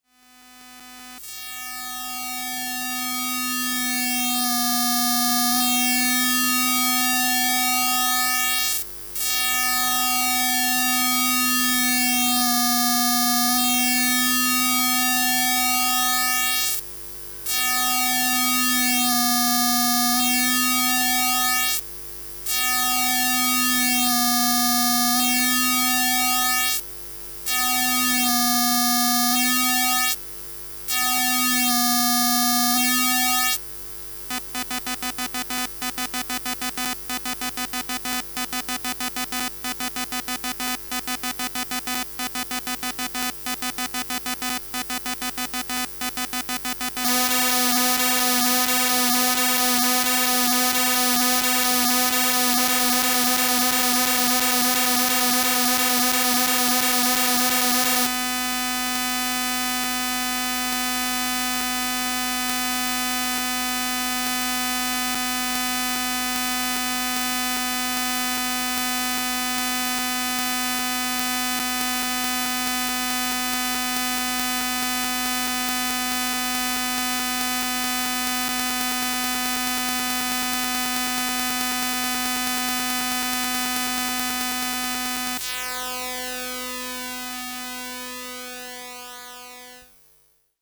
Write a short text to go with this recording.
Electromagnetic field song of a garland light. Recorded with a telephone pickup coil.